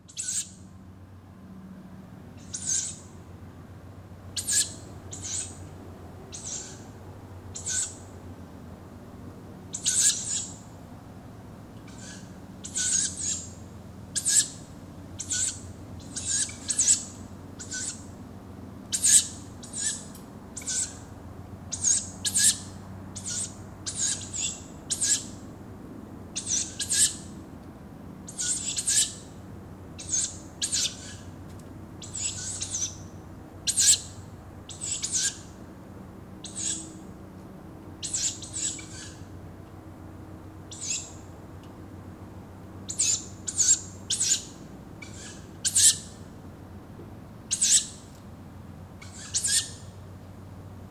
Mihkli, Estonia. Young owls in oak grove.